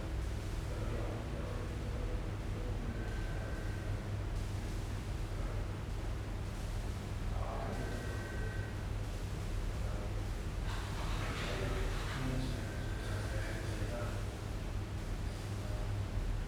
Sachsenhausen-Nord, Frankfurt am Main, Deutschland - Frankfurt, museum office
At the office of the museum. The silence of the working space with door sounds, distant phone signals, foot steps and conversations.
soundmap d - social ambiences and topographic field recordings